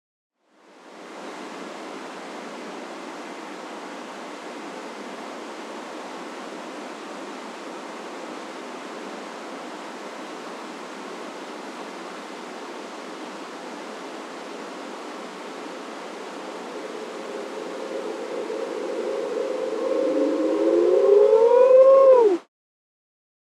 Walking Holme Tunnel reply
There was a reply!
Holme, Kirklees, UK